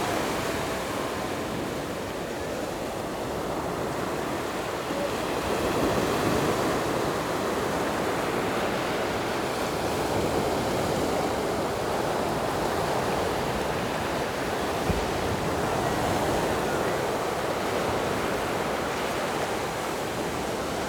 Yilan County, Taiwan - the waves
Sound of the waves, In the beach, Hot weather
Zoom H6 MS+ Rode NT4